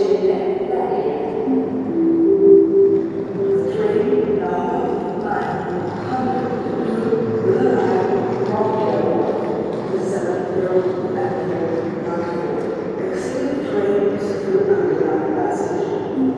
Vilnius train station announcements in the early moring